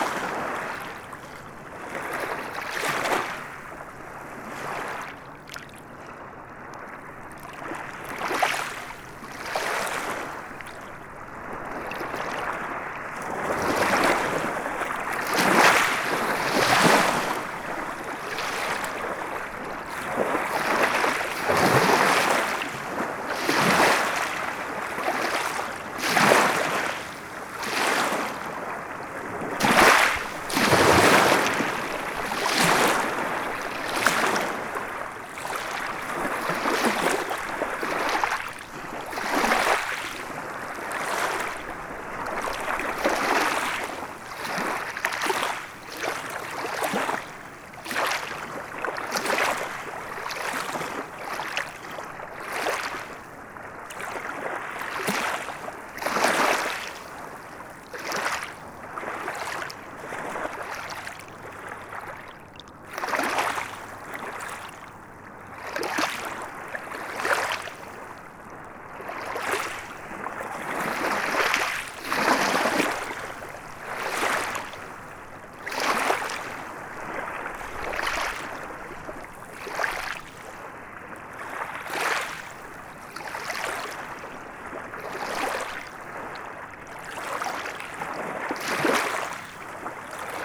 Kritzendorf, Danube - Donauwellen in Kritzendorf (schuettelgrat)
Wenn die Schiffe kommen, ist es mit der Sonntagsruhe vorbei. Und das ist gut so, denken sich die Kieselsteine
derweil die Uferpflanzen von den Wellen überwältigt werden.
(rp)
Klosterneuburg, Austria, 18 October 2009, 14:30